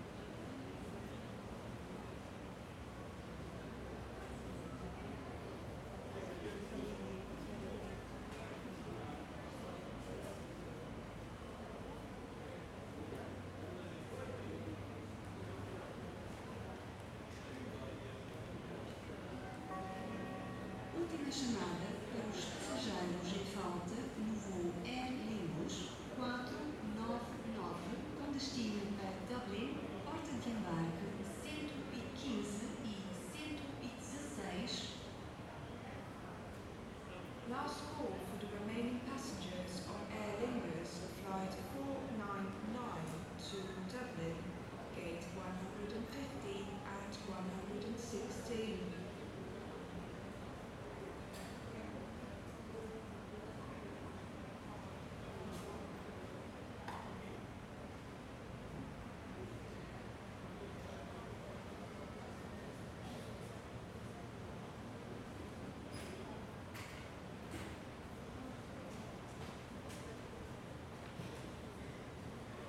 Faro, Portugal - Faro - Portugal - Airport
Faro - Portugal
Aéroport - ambiance hall d'embarquement.
Zoom H3VR
7 October 2018, 22:00